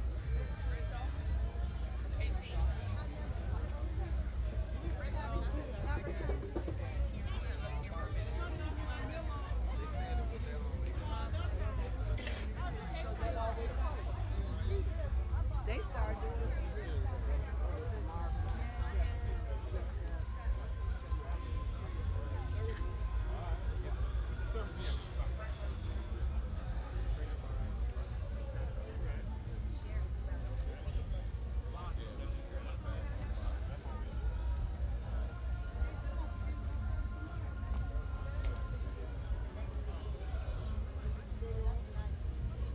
Washington Park, S Dr Martin Luther King Jr Dr, Chicago, IL, USA - African Fest 1
Recorded on Zoom H2 with in ear binaural mics
This is recording took place at the African Festival of the Arts on Labor Day Weekend 2012
3 September 2012